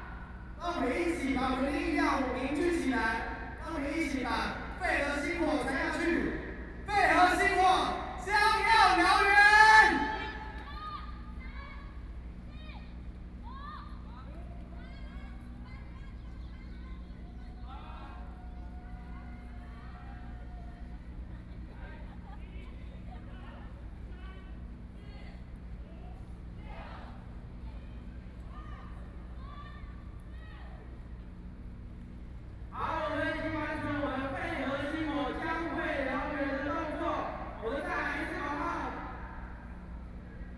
Taipei, Taiwan - Protest against nuclear power
Protest against nuclear power, Zoom H4n+ Soundman OKM II